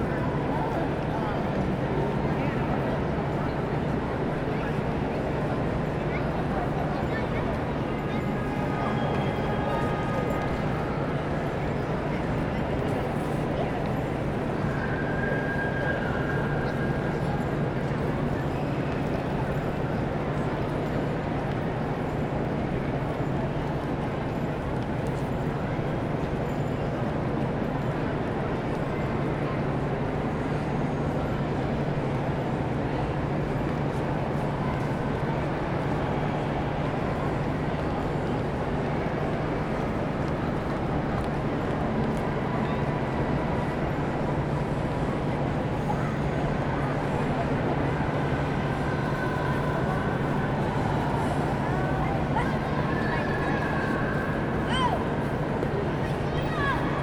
neoscenes: Grand Central Great Hall